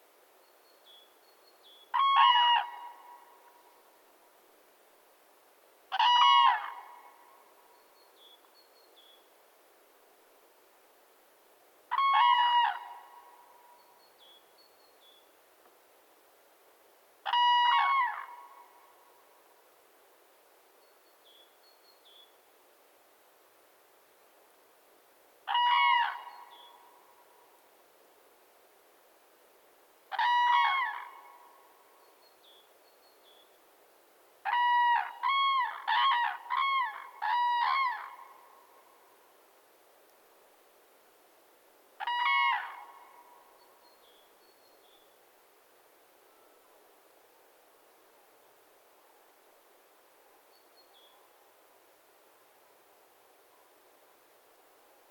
Sirutėnai, Lithuania, two cranes
A pair of cranes (Grus grus) welcoming fellow fieldrecordist...